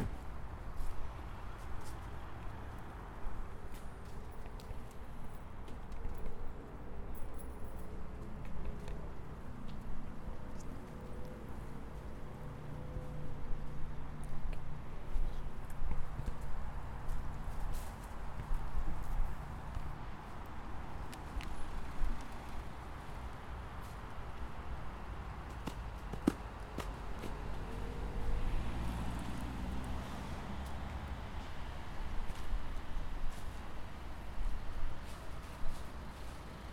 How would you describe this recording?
It was recorded at the university parking. We can hear at the beginning how someone closes the door of a car, the sound of traffic present throughout the recording and also other sounds like voices of people talking and the sound of stepping on the leaves since it was recorded on autumn and there were lots of fallen leaves. Recorded with a Zoom H4n.